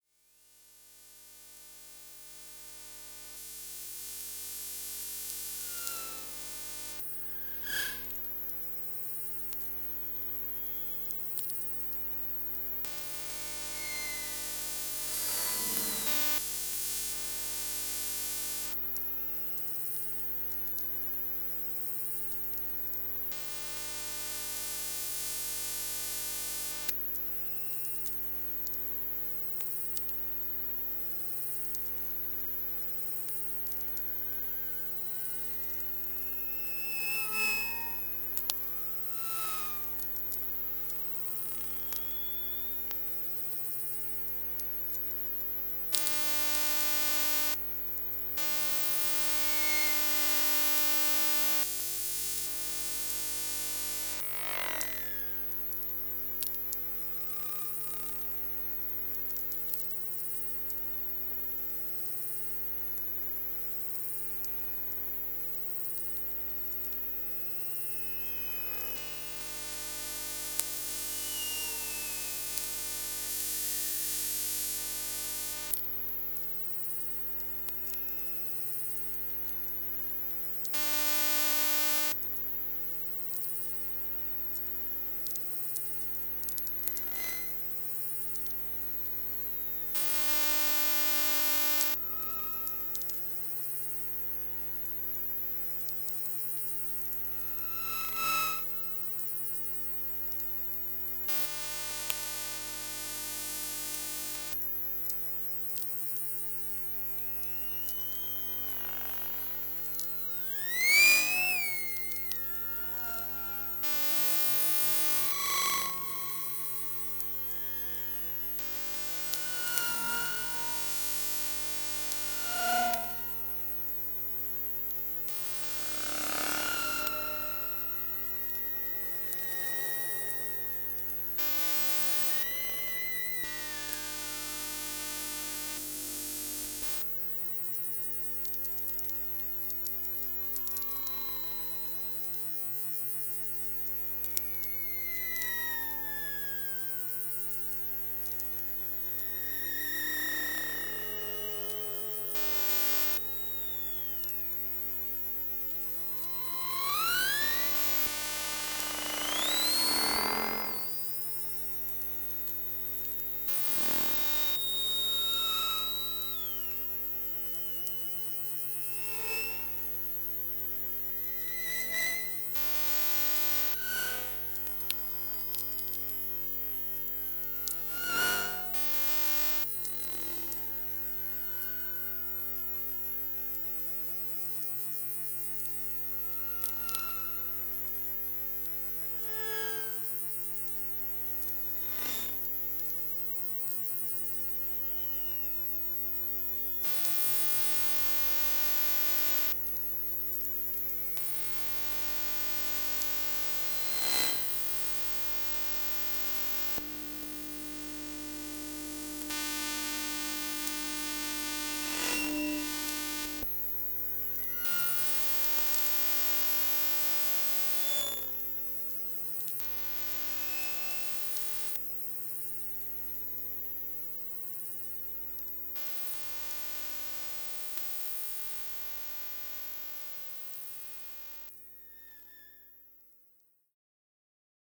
Kaliningrad, Russia, elctromagnetic traffic
scanning the street with electromagnetic antenna